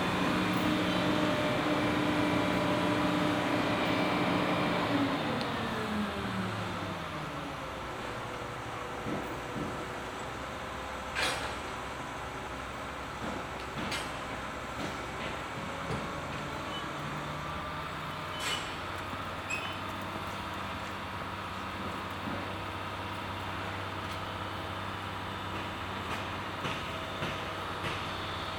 vancouver, davie street, subway construction
sound of work at a big street construction for the new vancouver airport subway
soundmap nrw: social ambiences, art places and topographic field recordings
davie street corner to mainland